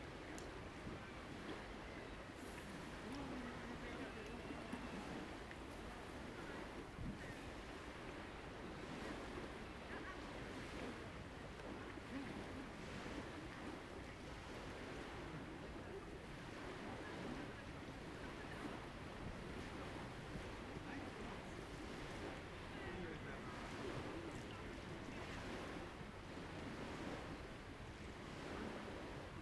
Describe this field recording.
splashing waves, wind, people walking along the beach, talking, laughing, jogging. recorded with H2n, 2CH, handheld